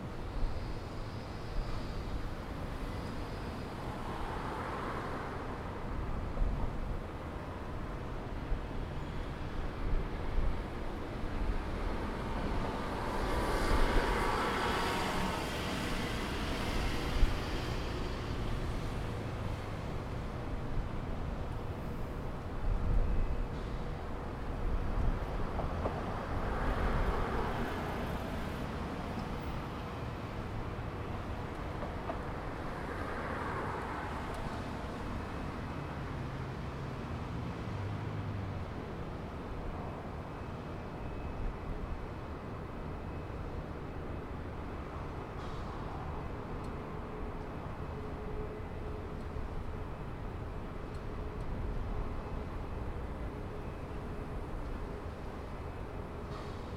Puckey Ave, North Wollongong NSW, Australia - Monday Mornings at UOW Innovation
Recording at the corner of Building 232 at the UOW innovation campus during a morning class.